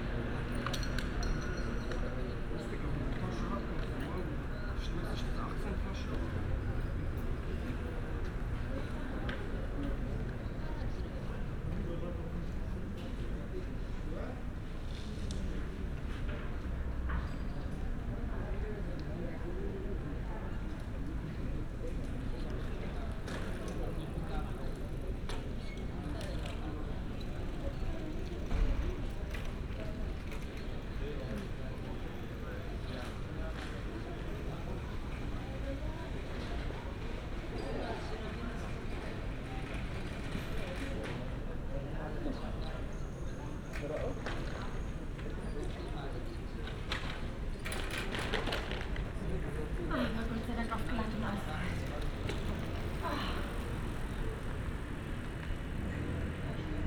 Berlin: Vermessungspunkt Friedelstraße / Maybachufer - Klangvermessung Kreuzkölln ::: 23.07.2013 ::: 01:52